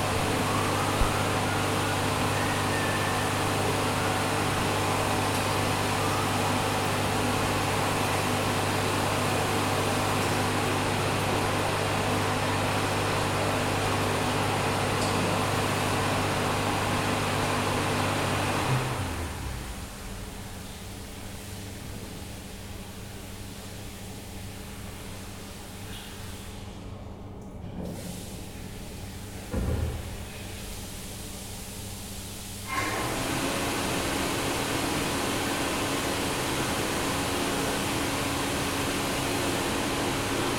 Aeroport, Barcelona, Spain - (-203) near Airport toilet
Recording of an airport toilet sounds: air blades, whistling guy, flushing water, etc...
Recorded with Zoom H4